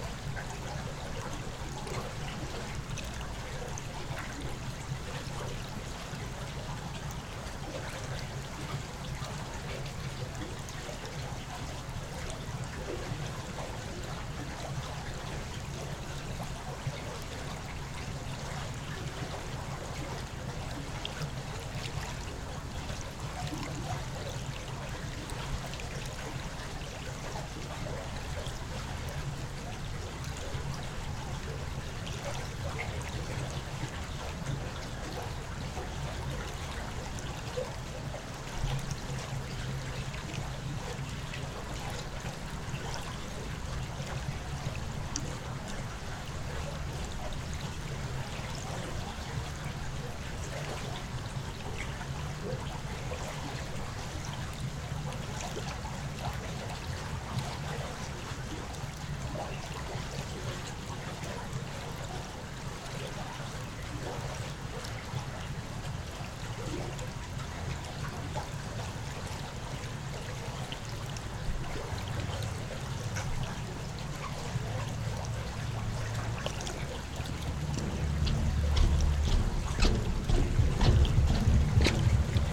Utena, Lithuania, bridge study
first half of the recording: geophone on the railings of the bridge. seconds half: listening from the bridge with conventional microphones
Utenos rajono savivaldybė, Utenos apskritis, Lietuva